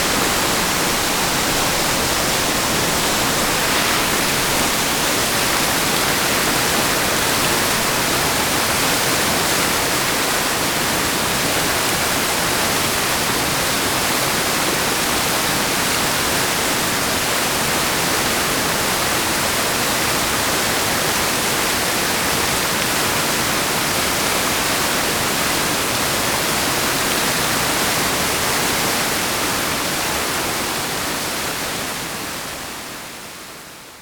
waterfall Skalce, Pohorje - from below
Pohorje, Slovenia, 21 December